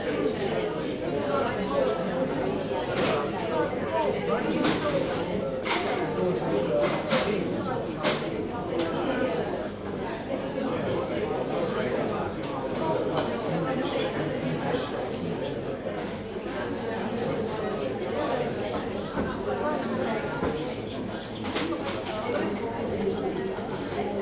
Royal Academy of Arts, Burlington House, Piccadilly, Mayfair, London, UK - Royal Academy of Arts Members cafe.
Royal Academy of Arts Members cafe. Recorded on a Zoom H2n.
11 August 2018